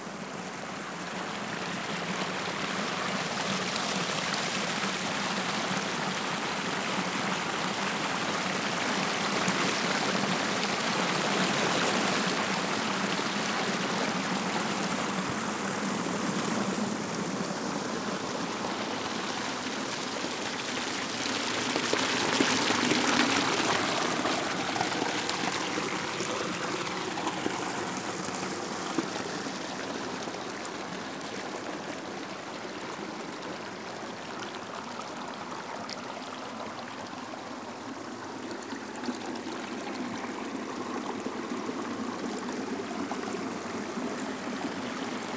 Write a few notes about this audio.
parcours de l'huveaune sur son premier mètre vers la mer, Course of the huveaune sur son premier mètre towards the sea